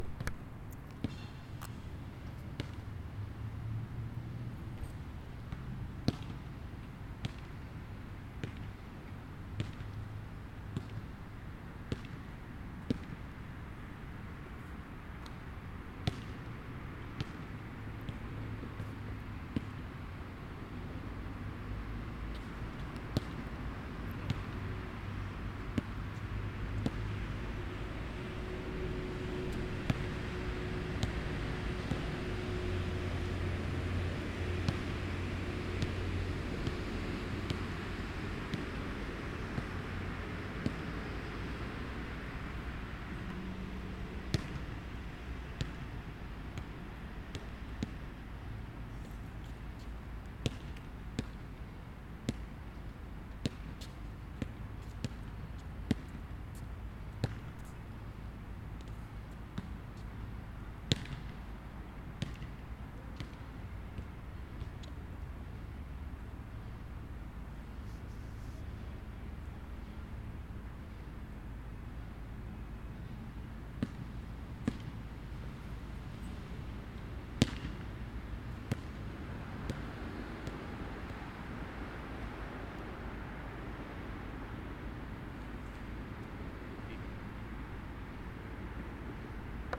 Raglan St, Waterloo NSW, Australia - Basketball
Basketball on Raglan Street. Recorded with a Zoom pro mic.
New South Wales, Australia, 10 July, ~19:00